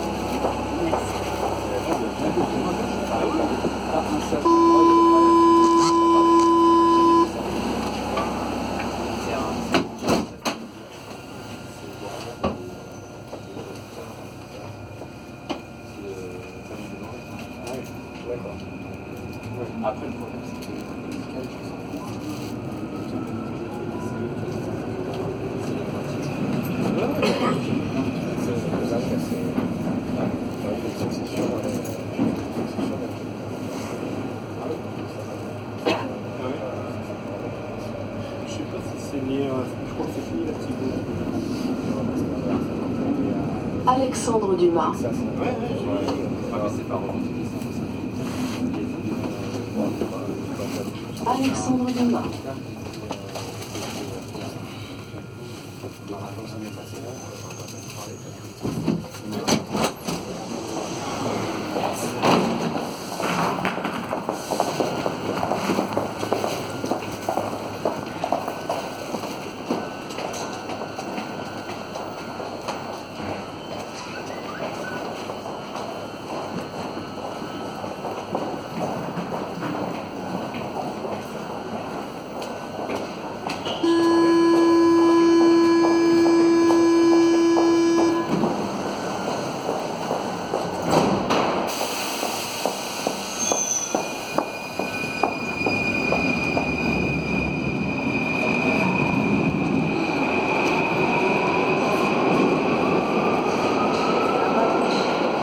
Rue de Menilmontant, Paris, France - Ménilmontant subway
In the subway from Menilmontant to Alexandre Dumas.